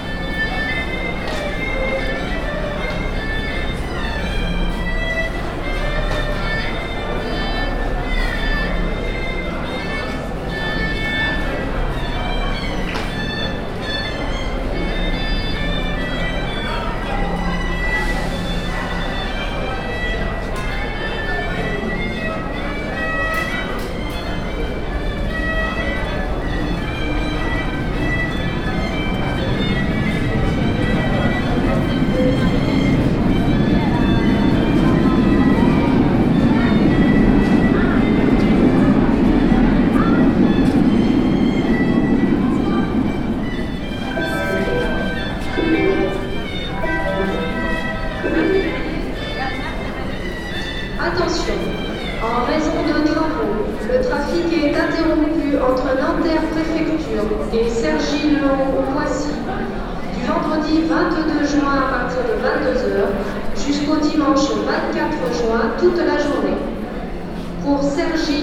Les Halles, Paris, France - Chatelet - Les Halles RER station, Waiting RER A
France, Paris, Chatelet - Les Halles, RER station, RER A, train, binaural